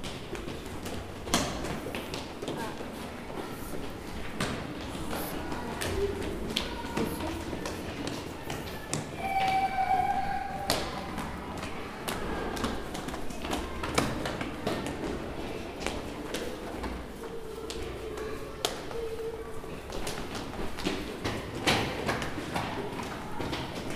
Neudorf Ouest, Strasbourg, France - Children in the stairs
Children climbing down the stairs of their school, in strasbourg city, france.